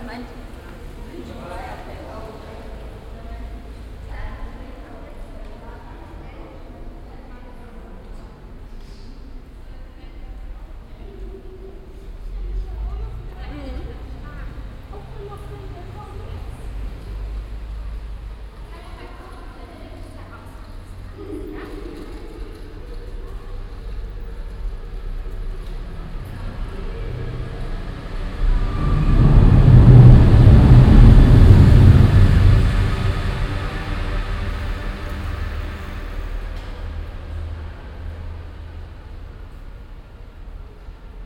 cologne, maybachstrasse, unterführung, ein zug
nachmittags unter zugbrücke, hallende schritte und stimmen, pkw und radverkehr, eine zugüberfahrt
soundmap nrw: social ambiences/ listen to the people - in & outdoor nearfield recordings
28 August, ~09:00